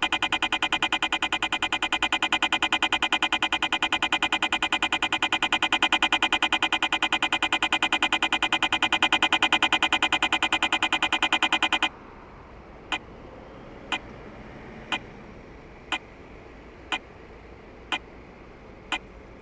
Mechelen, Belgique - Red light
On a quite busy street, red light indicates to pedestrians they can cross. The sound is adaptative to the traffic noise. If there's few cars, the red light produces few sound, and conversely.
Mechelen, Belgium, 21 October 2018, 11:30